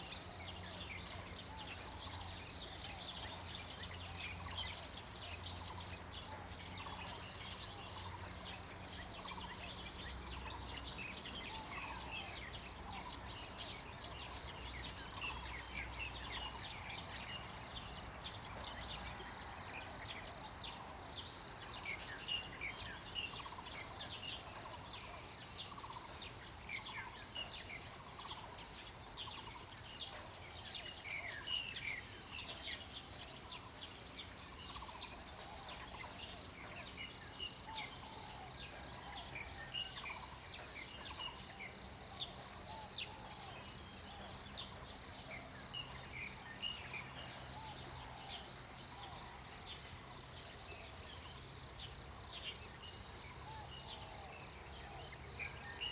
體驗廚房, 見學園區桃米里 - Bird and traffic sound
Bird calls, Traffic Sound
Zoom H2n MS+XY